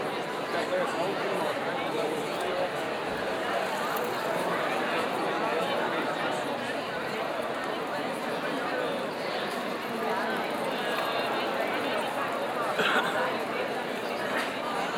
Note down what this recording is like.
This is the first part of the Maienzug, people are clapping to the march, shouting at each other or just say hello, the brass bands are playing, the military history of the Maienzug is quite audible, there are also Burschenschaften singing their strange songs while stamping with their feet.